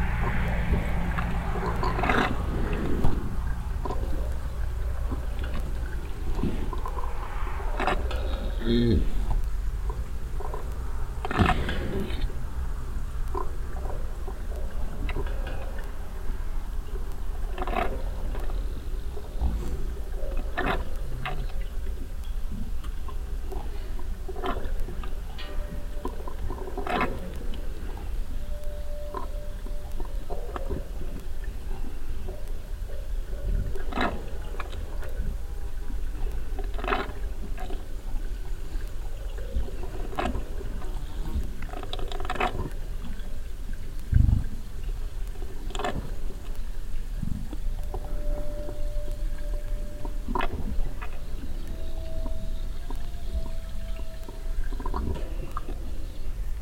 wilwerwiltz, meadow, cows
Cows on an open meadow, drinking from a mobile water supply waggon and walking on muddy ground. In the distant from the nearby street some cars passing by.
Wilwerwiltz, Weide, Kühe
Kühe auf einer offenen Weide, von einem mobilen Wasserspender trinkend und auf matschigem Grund laufend. In der Ferne von der nahen Straße die Geräusche einiger vorbeifahrender Autos.
Wilwerwiltz, prairie, vaches
Des vaches sur une prairie ouverte, buvant d’un réservoir d’eau mobile et bruits de pas sur un sol boueux. Dans le fond, on entend des voitures roulant sur la route proche.
Project - Klangraum Our - topographic field recordings, sound objects and social ambiences